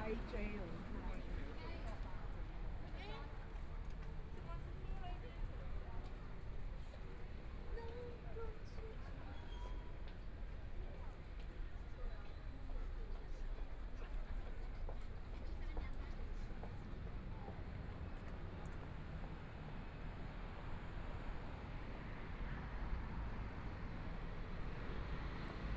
中山區大直里, Taipei City - Walking across different streets
Walking across different streets
Binaural recordings, Please turn up the volume a little
Zoom H4n+ Soundman OKM II